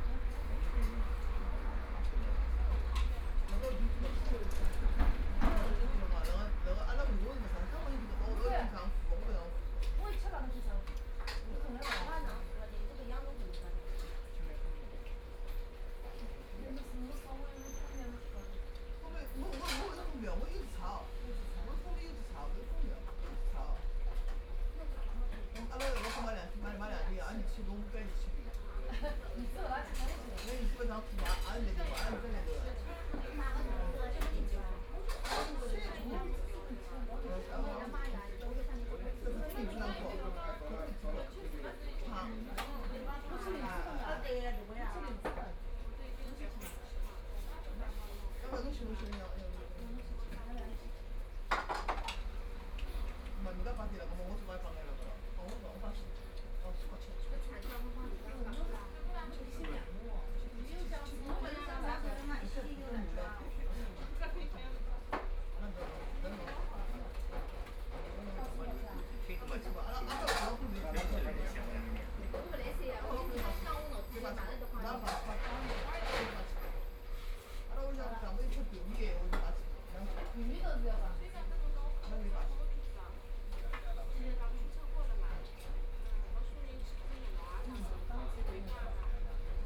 In the restaurant, Traffic Sound, Binaural recording, Zoom H6+ Soundman OKM II